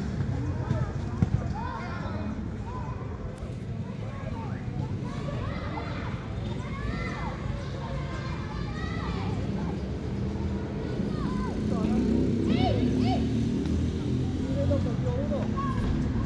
Parque Público San Antonio De Padua, Cl. 3 Sur, Bogotá, Colombia - Day at the park
teenagers and children play soccer while vehicles drive through the area
Región Andina, Colombia, 27 May 2021, 15:33